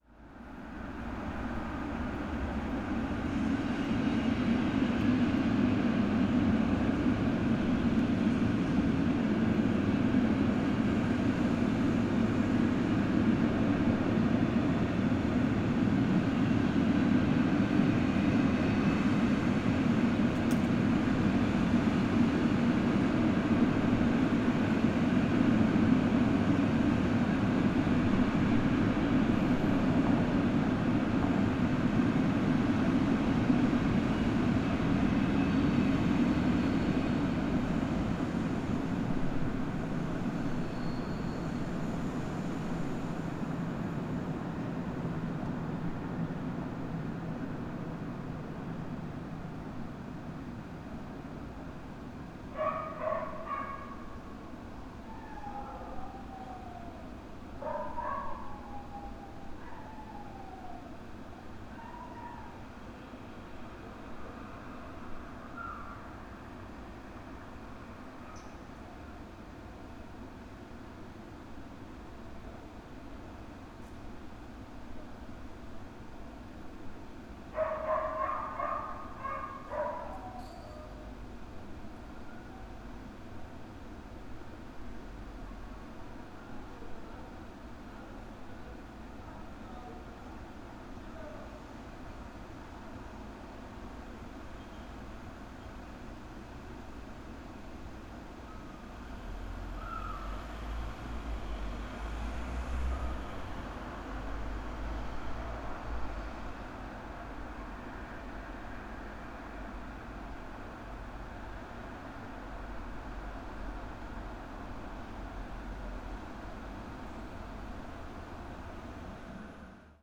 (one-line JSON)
{"title": "neue maastrichter - train, dog", "date": "2011-10-03 01:40:00", "description": "cologne, night, freight train passes, dog mourns", "latitude": "50.94", "longitude": "6.93", "altitude": "56", "timezone": "Europe/Berlin"}